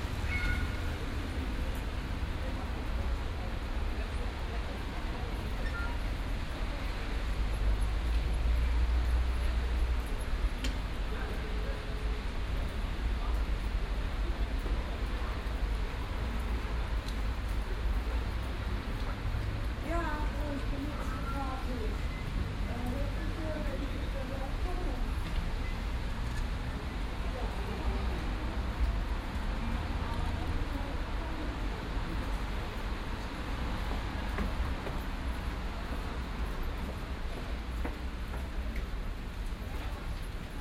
Löhrrondell, square, Koblenz, Deutschland - Löhrrondell 3
Binaural recording of the square. Third of several recordings to describe the square acoustically. More remote, people waiting, entering a shop, leaving, talking on the phone.